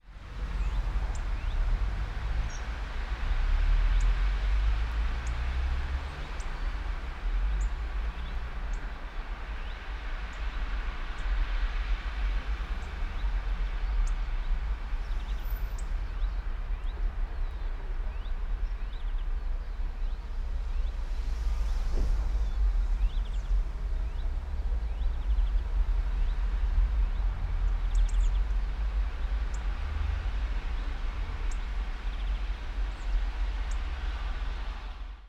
all the mornings of the ... - mar 27 2013 wed